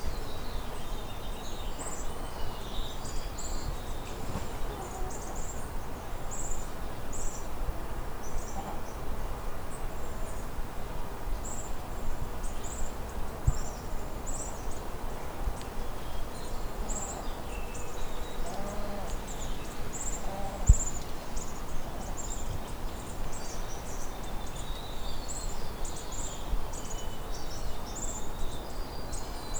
Recorded on Zoom H5 in backyard of Library of MusicLandria, near flowering Ceanothus with bees, light rail train, and neighbor's chickens. My first time making a field recording.
Sacramento County, California, United States of America, 29 March 2020, 16:00